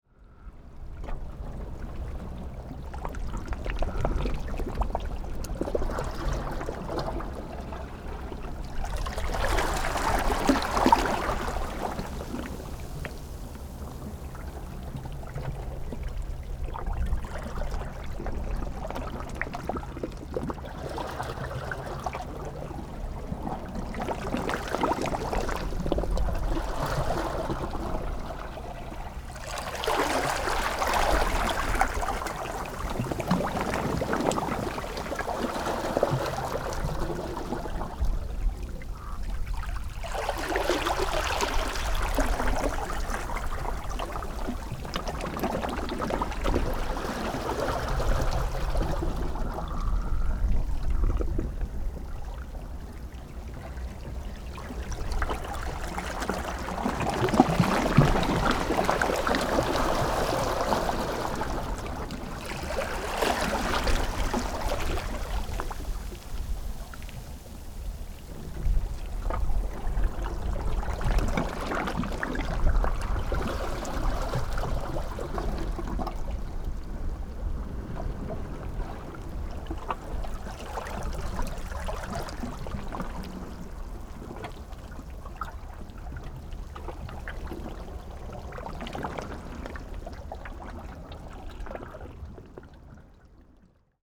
tuman, Keelung - Waves
Sea water between the rocks, Sony PCM D50
基隆市 (Keelung City), 中華民國, 24 June 2012